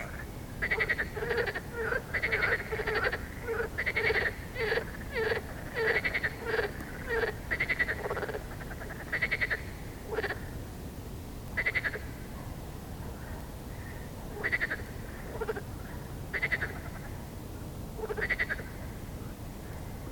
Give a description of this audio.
We came home from a lovely meal in Amsterdam and, as it was a nice warm evening, decided to take a stroll around the neighbourhood. Not far from our place, we heard this amazing sound, so I ran back to get my recorder, and Mark and I stood for a good 20 minutes or so listening to the frogs and all their awesome voices. Recorded with EDIROL R-09 onboard mics, sorry it's a bit hissy.